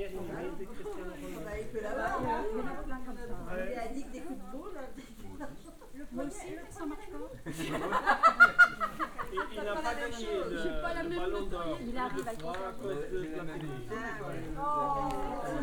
trail leading east from Porto da Cruz - the French
(binaural) a group of French hikers taking a break on the trail